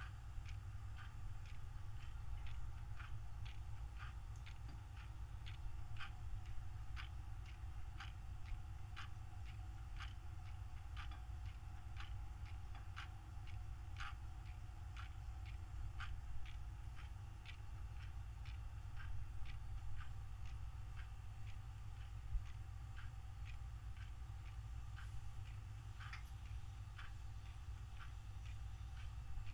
Bolton Hill, Baltimore, MD, USA - Clock in Carter